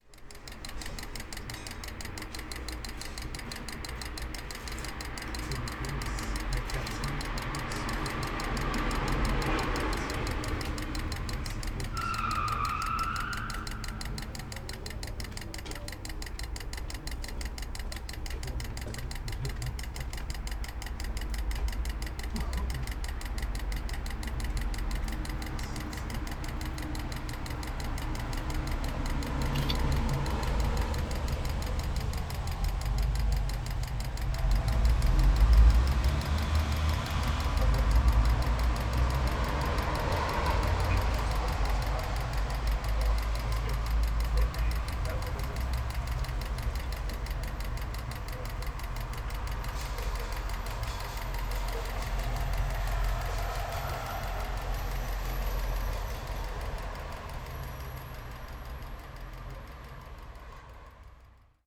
berlin: urbanstraße - the city, the country & me: broken outside lamp
the city, the country & me: august 15, 2010